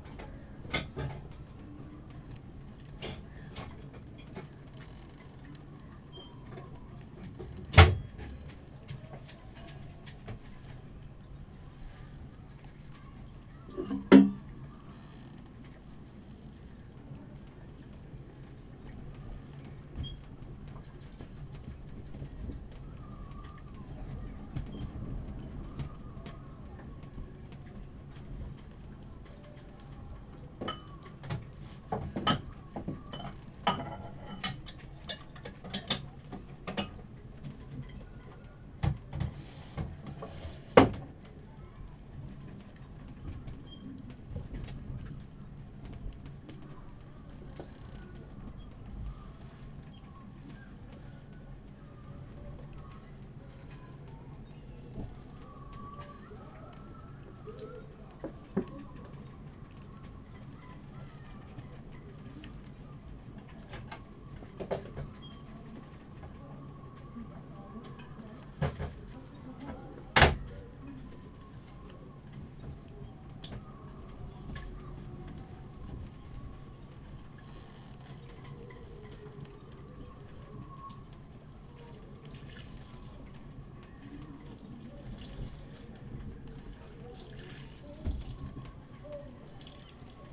workum, het zool: marina, berth h - the city, the country & me: marina, aboard a sailing yacht
doing the dishes, music of a party from a nearby camping place
the city, the country & me: july 18, 2009
Workum, The Netherlands, July 2009